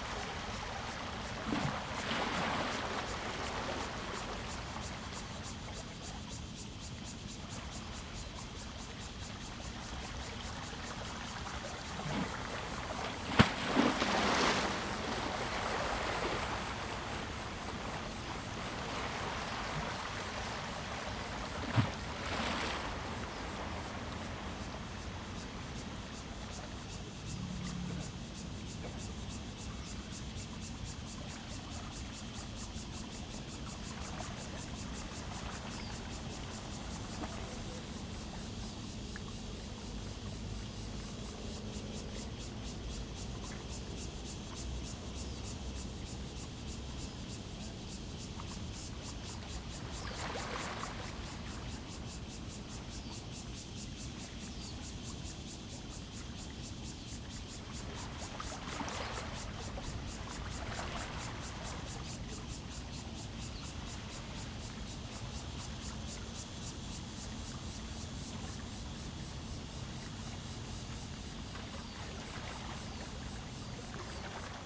Tide, In the dock
Zoom H2n MS+XY
Tamsui District, New Taipei City, Taiwan, July 2015